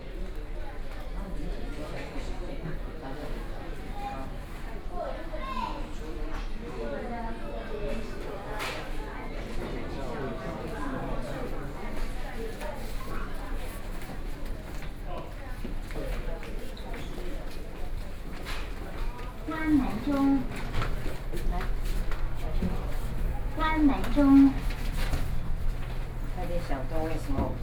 Ministry of Health and Welfare, Taipei - Elevator
in the Elevator, Sony PCM D50 + Soundman OKM II